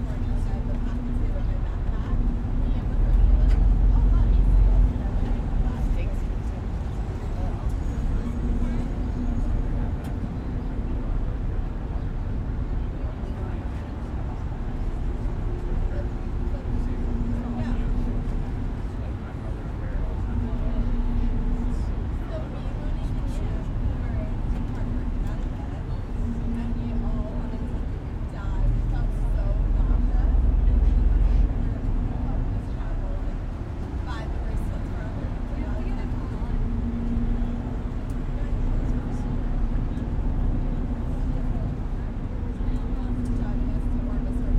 11 Pier 11/Wall Street - Hoboken/NJ Transit Terminal, New York, NY 10005, USA - Pier 11 Rockaway Ferry
Sounds of the Rockaway Ferry docking at Wall Street/Pier 11.